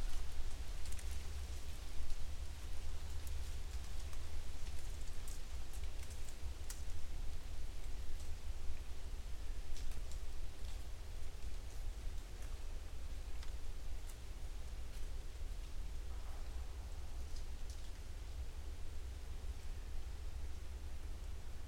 Denmark, ME - Beaver Pond Rd
Walking around the woods. Recorded with Usi mics on a Sound Devices 633